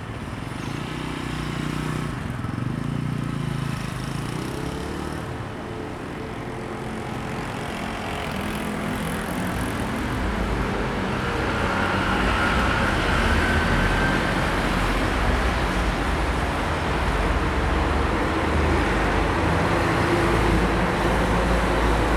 Nanzih District, Kaohsiung - Traffic Noise
In front of the entrance convenience stores, Traffic Noise, Sony ECM-MS907, Sony Hi-MD MZ-RH1
29 March 2012, 15:37